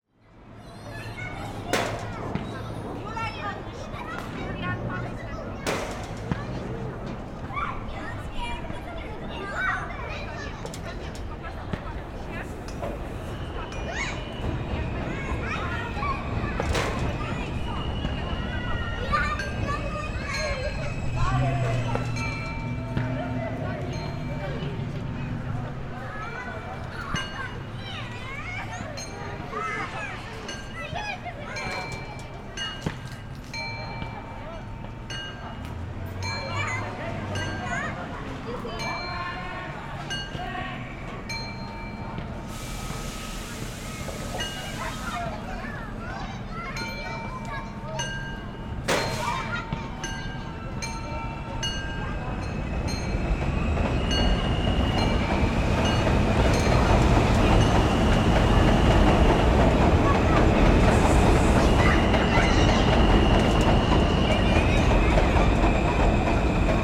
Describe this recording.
At Rosemary's Playground, Ridgewood, Queens, Kids playing, sounds of bells, traffic, and the M train.